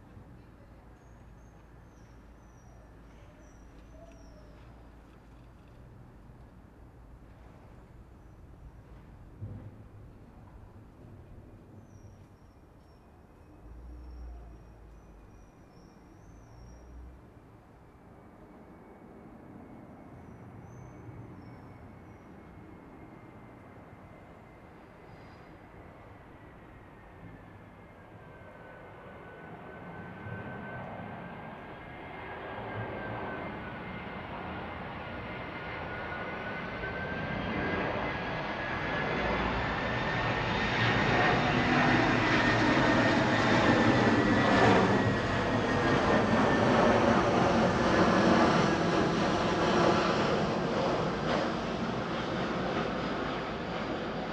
Night of blue moon. Quiet night interrupted by the passing airplanes.
Zoom H6